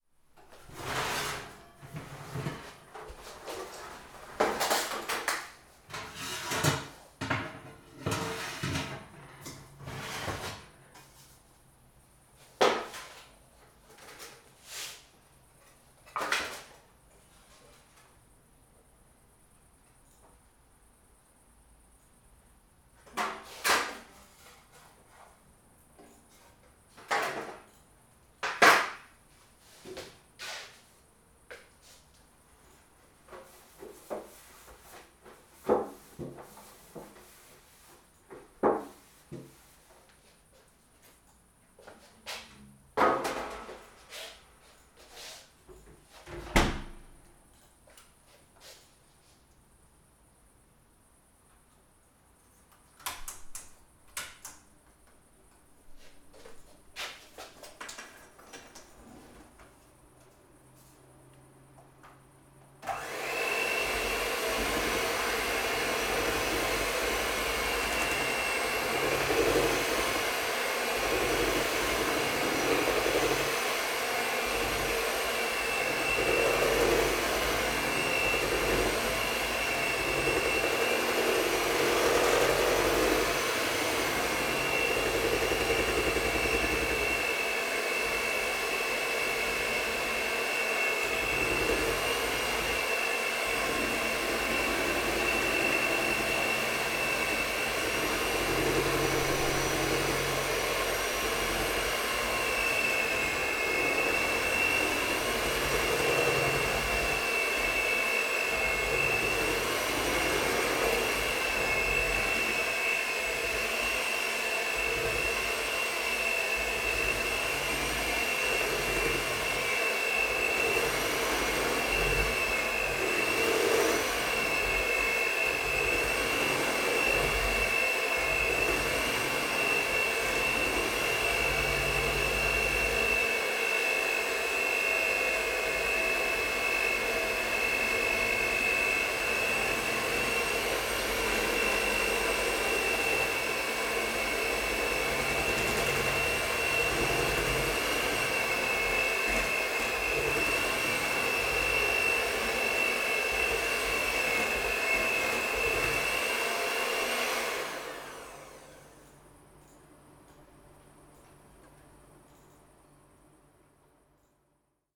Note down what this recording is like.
emptying the oven for the cake and mixing the dough